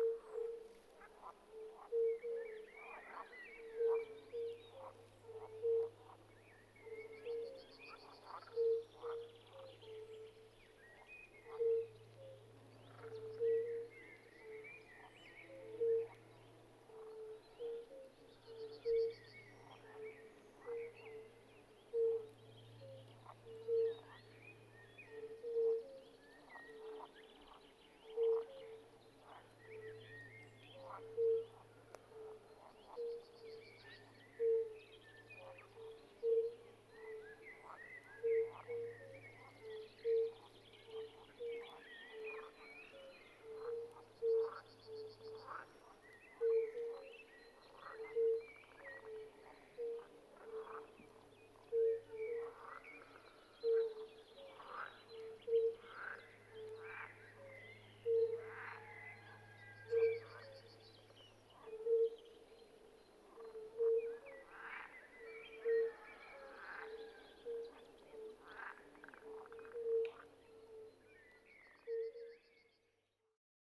Sirutėnai, Lithuania, fire-bellied toads
Fire-bellied toads (Bombina Bombina) singing.